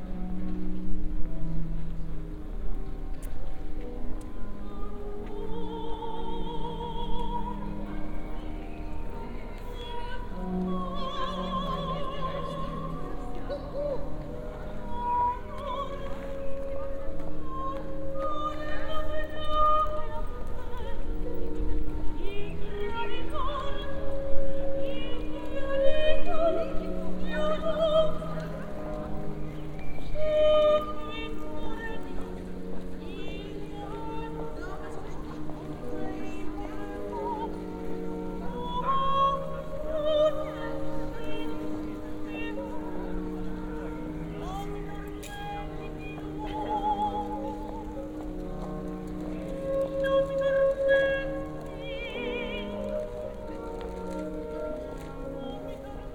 Grodzka, Kraków, Poland - (119 BI) Street Opera singer
This place is pretty often chosen by a variety of musicians with special attention to those closer to classical rather than popular music.
Recorded with Soundman OKM on Sony PCM D100